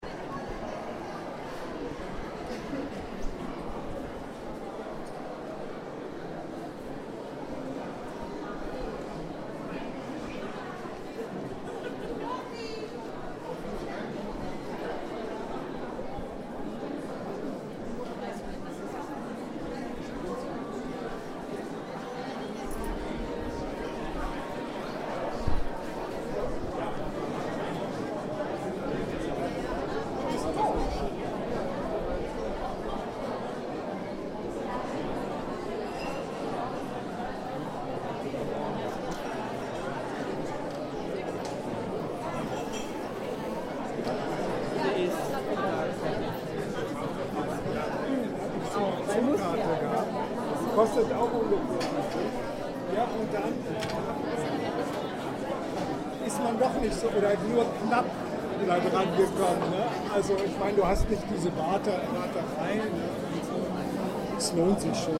Museum Ludwig, Cologne, Opening Exhibition Gerhard Richter Abstract Paintings
Atmo at the opening of the exhibition "Abstract Paintings" by the German painter Gerhard Richter.
Cologne, Germany, 6 May 2009